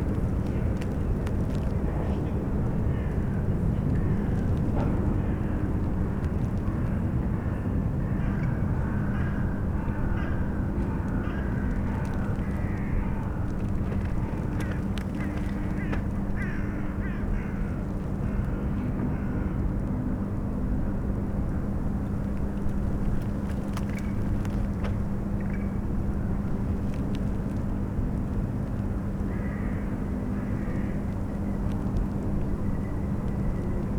berlin, plänterwald: spree - the city, the country & me: spree river bank
cracking ice of the frozen spree river, crows, distant sounds from the power station klingenberg, joggers and promenaders, a tree rustling in the wind
the city, the country & me: january 26, 2014
26 January 2014, 15:27